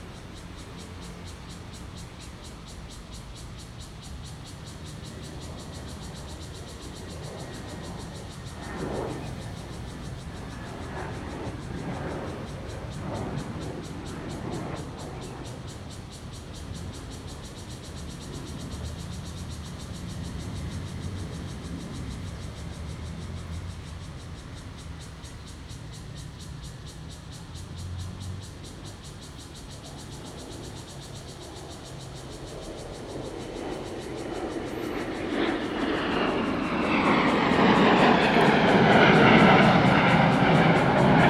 Beneath trees, Traffic Sound, Cicadas sound, Fighter flying through
Zoom H2n MS+XY